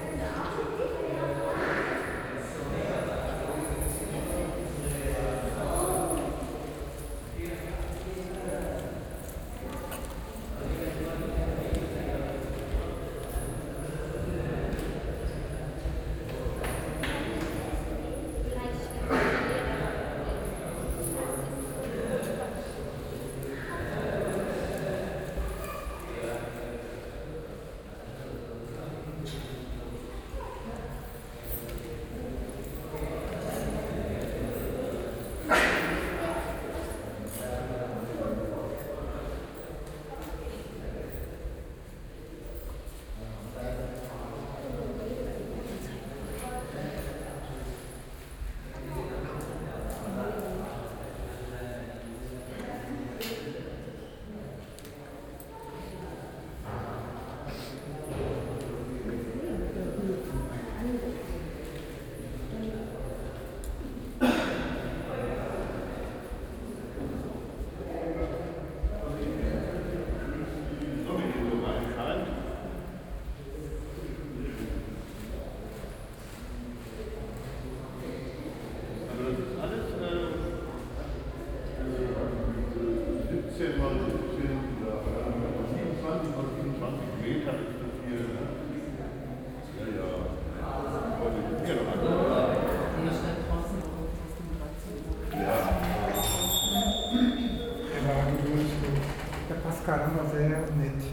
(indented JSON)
{
  "title": "Sri-Kamadschi-Ampal-Tempel, Hamm-Uentrop, Germany - Hindu temple ambience",
  "date": "2022-06-04 13:40:00",
  "description": "We enter the temple through a small room where visitors are asked to please leave their shoes and all leather ware since it means insult to the goddess. Visitors may also wash their hands here. Inside the temple believers walk from shrine to shrine and in prayers many times around one shrine. Some families sit one the ground waiting patiently with their offerings of fruits and flowers for a priest to attend to them. The temple observes a lunch break; so we leave the temple with all other visitors and the doors are locked behind us.\n“When it was completed and inaugurated on 7 July 2002, the Sri Kamadchi Ampal Temple in the city of Hamm (Westphalia) was the largest Dravida temple in Europe and the second largest Hindu temple in Europe after the Neasden Temple in London, which was built in the North Indian Nagara style. It is the only temple of the goddess Kamakshi outside India or South Asia.”",
  "latitude": "51.69",
  "longitude": "7.95",
  "altitude": "65",
  "timezone": "Europe/Berlin"
}